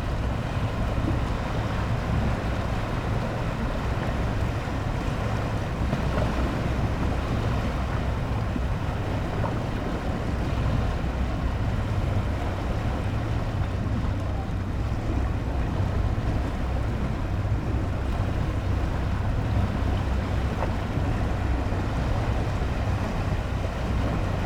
late aftrenoon sea, Novigrad - while reading, silently